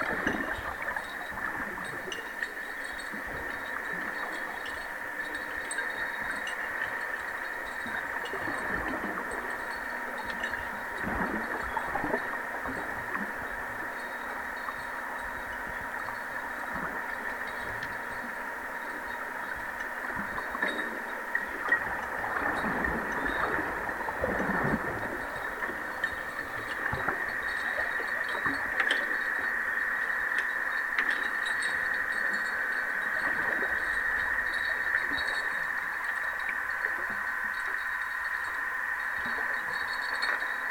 Varvsgatan, Härnösand, Sverige - Under water
The sound is recorded underwater at the quay on Kronholmen in Härnösand. It's a strong wind. The sound is recorded with hydrophonic microphones.
16 September 2020, 20:33